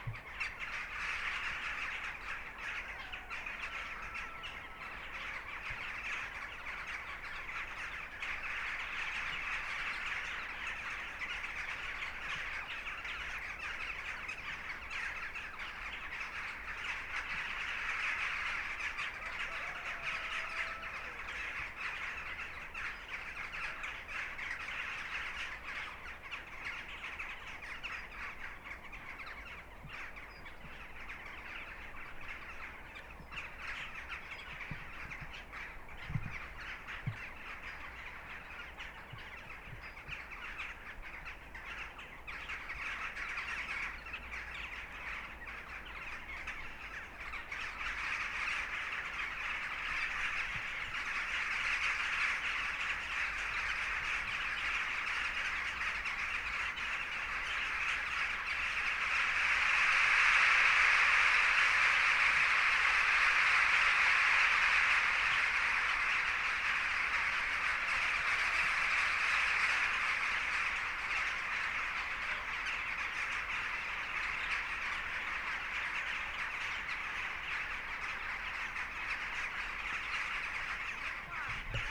Vrouwvlietpad, Mechelen, België - Kauwtjes Dijkstein
Thousands of jackdaws in the trees of castle domain Dijkstein
Mechelen, Belgium, 19 January 2019, 17:23